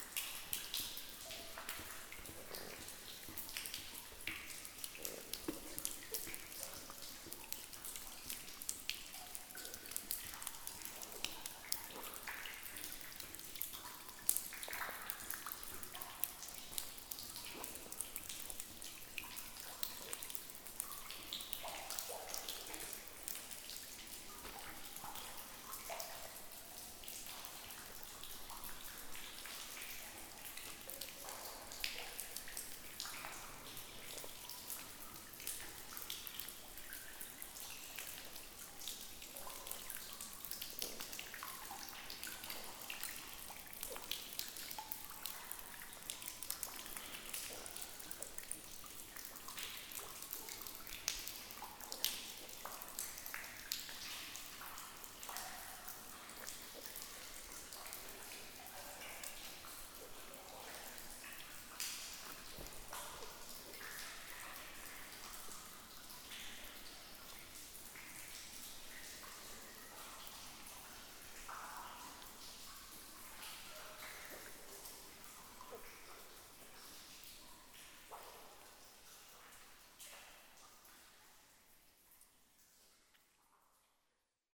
{"title": "Rumelange, Luxembourg - Mine ambience", "date": "2015-02-13 07:20:00", "description": "In an underground mine, the sweet ambience of various tunnels, walking slowly into the water.", "latitude": "49.45", "longitude": "6.04", "altitude": "419", "timezone": "Europe/Luxembourg"}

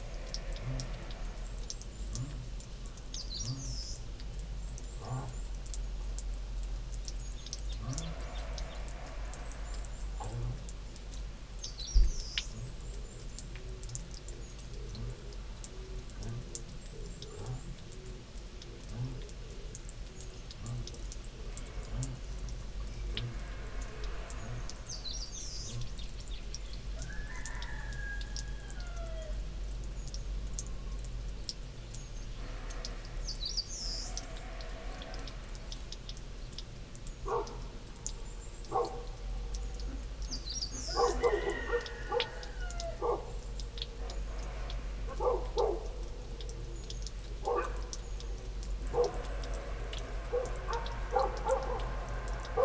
{"title": "Irrigation channel in Taegol Valley", "date": "2020-04-18 09:30:00", "description": "...sounds from throughout this long narrow valley reach the mics placed in a concrete box irrigation channel...a coughing dog, rooster and wind through nearby fur trees...spacially interesting...", "latitude": "37.94", "longitude": "127.66", "altitude": "158", "timezone": "Asia/Seoul"}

